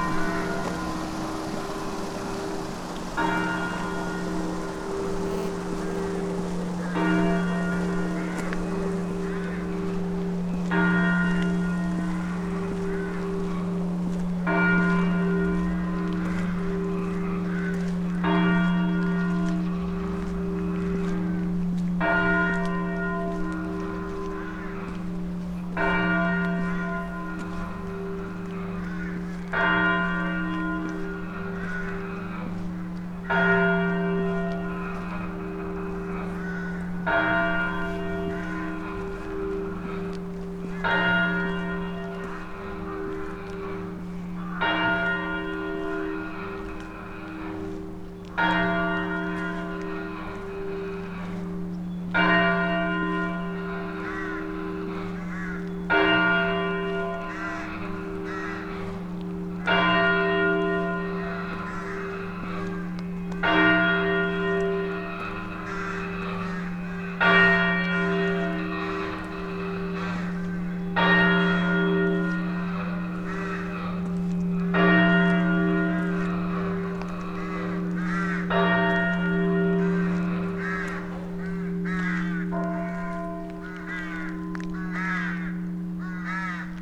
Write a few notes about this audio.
a walk: funeral bells of local church, holy spring and crows